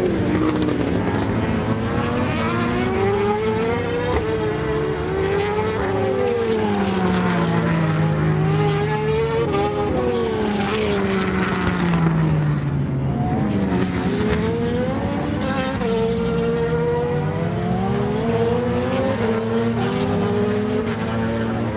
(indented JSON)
{
  "title": "Castle Donington, UK - British Motorcycle Grand Prix 2003 ... moto grand prix ...",
  "date": "2003-07-12 09:50:00",
  "description": "Free Practice ... part one ... Melbourne Loop ... Donington Park ... mixture of 990cc four strokes and 500cc two strokes ... associated noises ... footsteps on gravel ... planes flying into East Midlands Airport ... etc ... ECM 959 one point stereo mic to Sony Minidisk ...",
  "latitude": "52.83",
  "longitude": "-1.38",
  "altitude": "96",
  "timezone": "Europe/Berlin"
}